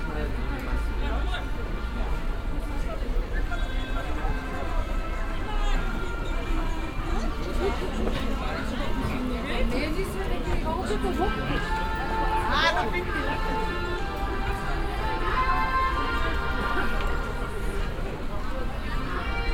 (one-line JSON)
{"title": "cologne, neumarkt, weihnachtsmarkt, heitschi bumm beitschi", "date": "2008-12-23 17:07:00", "description": "weihnachtsmarkt ambience mit hängenbleibender loop beschallung. geräusche von ständen und zubereitungsautomaten, einem karussel und stimmen, nachmittags\nsoundmap nrw - weihnachts special - der ganz normale wahnsinn\nsocial ambiences/ listen to the people - in & outdoor nearfield recordings", "latitude": "50.94", "longitude": "6.95", "altitude": "55", "timezone": "Europe/Berlin"}